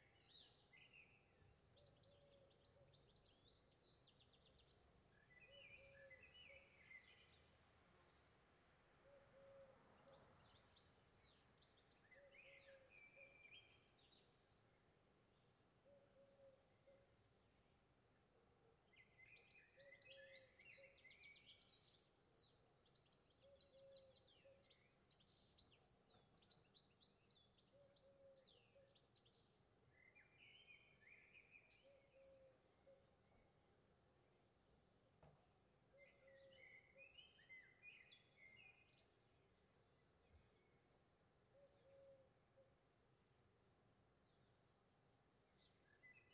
Rue Alphonse Daudet, Villeneuve-sur-Lot, France - Enregistrement sonore extérieur 01
Premier rec effectué en XY à la fenêtre de mon studio micros DM8-C de chez Prodipe (dsl) XLR Didier Borloz convertisseur UAD Apollo 8 Daw Cubase 10 pro . Pas de traitement gain d'entrée +42Db . Eléments sonores entendus essentiellement des oiseaux quelques véhicules et des sons de voisinage.